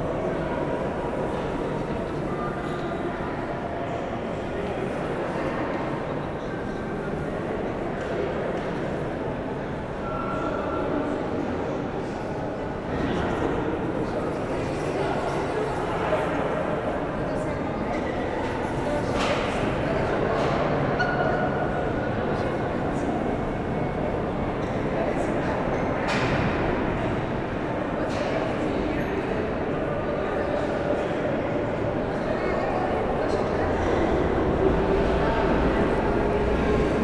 Sankt Pauli-Elbtunnel, Deutschland, Allemagne - Elbtunnel
Sankt-Pauli-Elbtunnel. The tunnel establishes the link below the Elb river. The tunnel is mostly cycleable and pedestrian. Sound of the lifts, and crossing all the tunnel by feet.